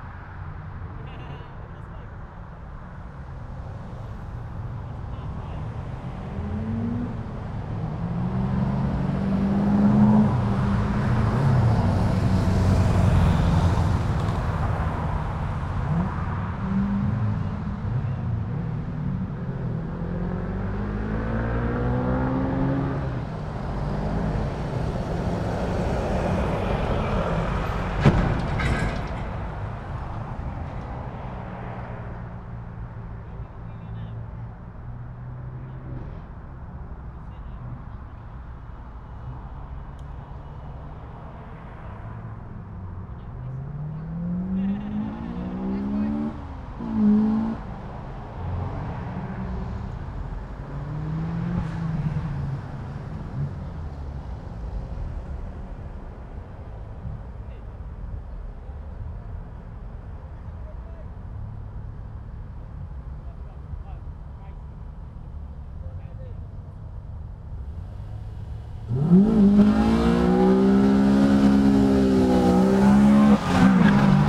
Main Dual Carriageway Reading, UK - Street Car Racing
These boys have been using the roads of Reading for practice during lockdown, often cruising around midnight and 5.30am. The racing went on for a couple of hours with other cars, vans, buses and trucks having to negotiate their way along the 'racetrack'. The exhausts on some cars exploding and back firing like fire crackers. Sony M10 with built-in mics.
18 August 2020, 22:03